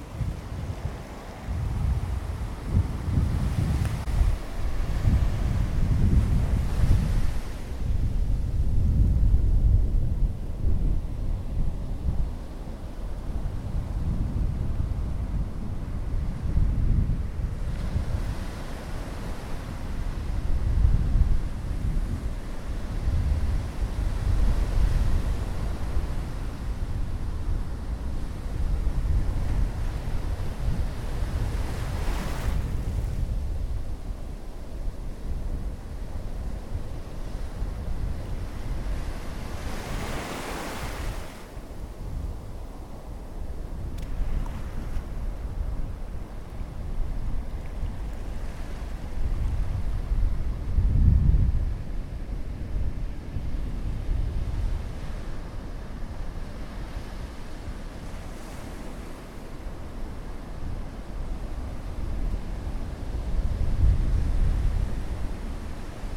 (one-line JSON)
{"title": "Lagoinha do Leste, Florianópolis, Santa Catarina, Brazil - Lagoinha do Leste beach sound", "date": "2021-04-04 10:30:00", "description": "The sound of the Lagoinha do Leste beach before the rain drops.\nrecorded with a ZOOM H1", "latitude": "-27.77", "longitude": "-48.48", "timezone": "America/Sao_Paulo"}